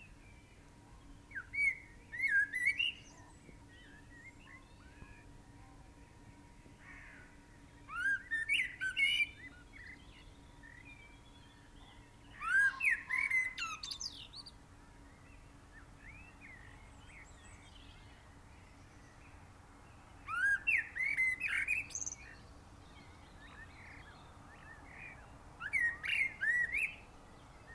Chapel Fields, Helperthorpe, Malton, UK - Clocks forward blackbird ...
Clocks forward blackbird ... blackbird calls and song ... pair of spaced mics on chair ... blackbird was singing on the back of the chair for some time ... background noise from traffic and planes ...